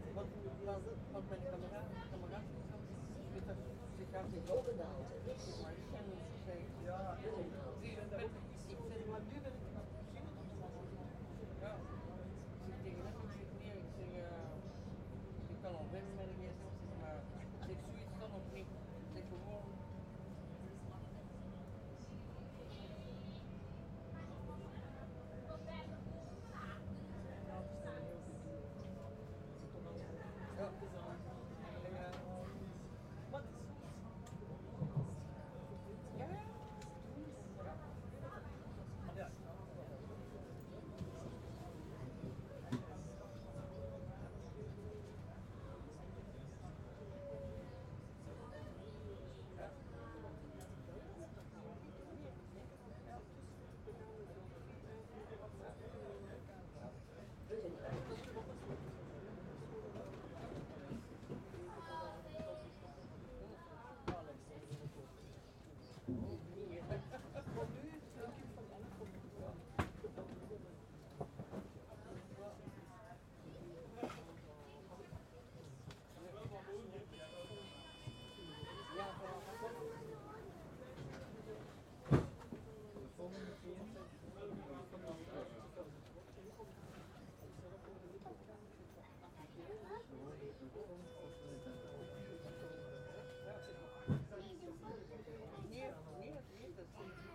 ZOOM H2 recorded with 4 mics to 2 channels